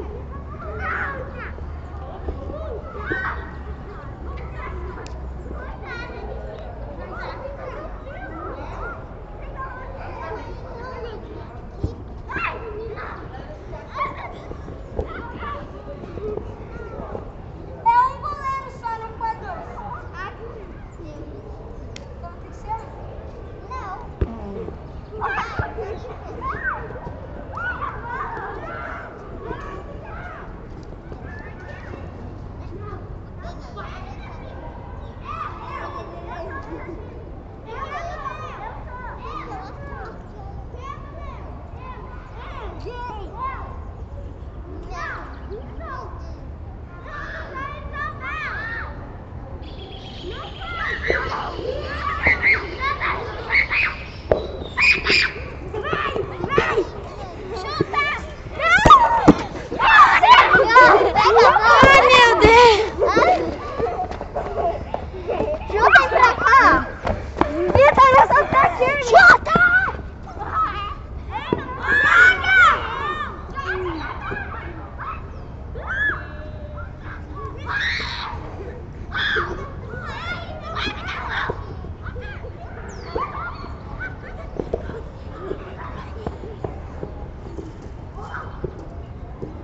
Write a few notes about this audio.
Soundscape lesson, Music Class.